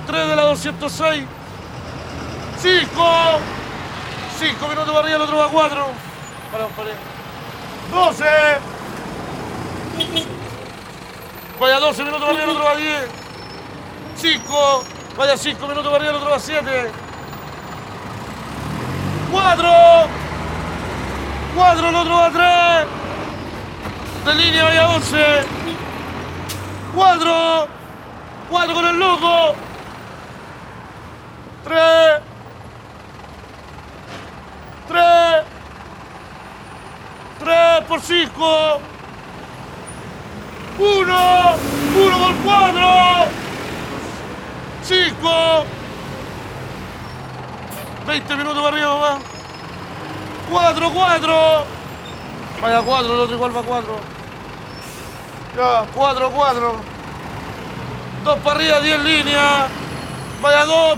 Bus Station Reloj de Flores - Sapo announcing the buses and the time between each one
The Sapo in Chile is the guy who announce the time between two buses of the same line, so they can slow down or speed up to get more passengers. The bus driver give them a tip for their help. You can find sapo at different bus station in the cities of Chile. Here is Andres, a sapo from Reloj de Flores, Viña del Mar. This sounds as been recorded for the project El Placer de Oír, a workshop of sound recording for blind people who choose the sound they wanted to listen to, and present them in a sound installation in the museum Centex.